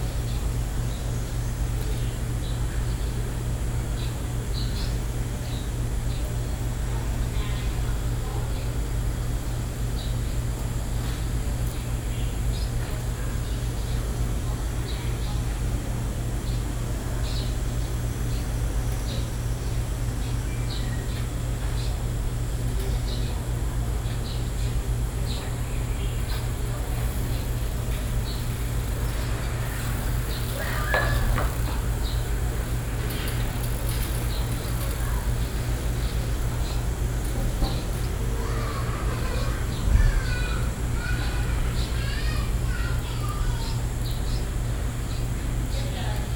貢寮老街, New Taipei City - Small town